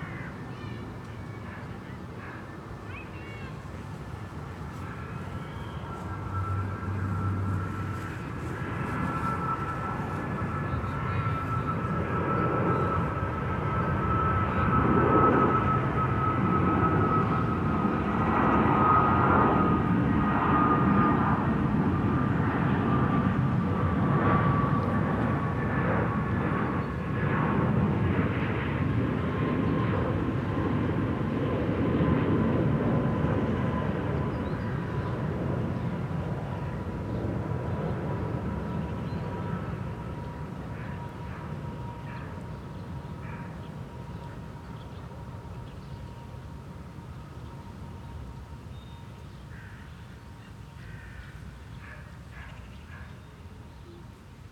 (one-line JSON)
{"title": "Pankebecken, Wedding, Berlin, Deutschland - Pankebecken, Berlin - flaps of crows' wings, bike with trailer passing by, airplane", "date": "2012-10-13 13:04:00", "description": "Pankebecken, Berlin - flaps of crows' wings, bike with trailer passing by, airplane.\n[I used the Hi-MD-recorder Sony MZ-NH900 with external microphone Beyerdynamic MCE 82]", "latitude": "52.56", "longitude": "13.39", "altitude": "40", "timezone": "Europe/Berlin"}